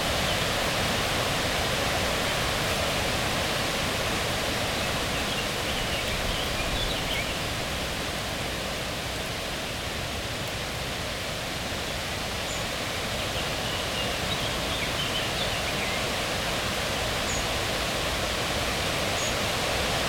Mont-Saint-Guibert, Belgique - Alone with the big tree
Spring time, hot weather, a lot of wind in the leaves and alone with the big lime-tree.
Mont-Saint-Guibert, Belgium, 26 May 2017